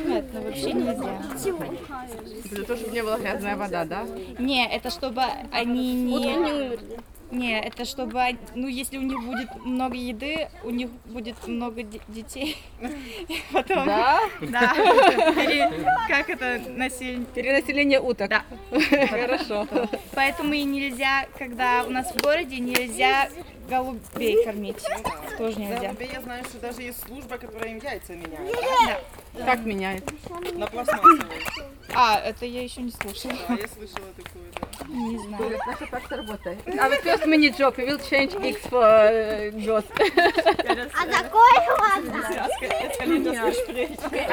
Audio documentation of an excursion to the forest with Ukrainian women and children
19 July 2022, 15:35